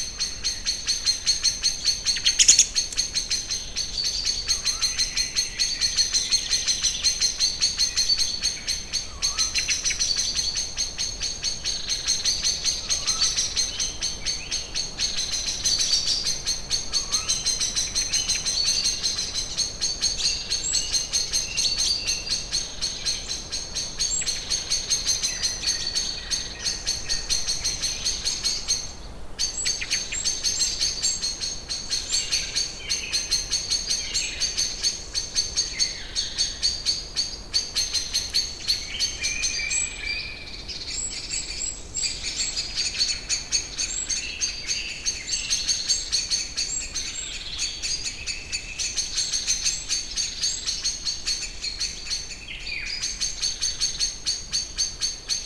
Cold summerday in forest, Taavi Tulev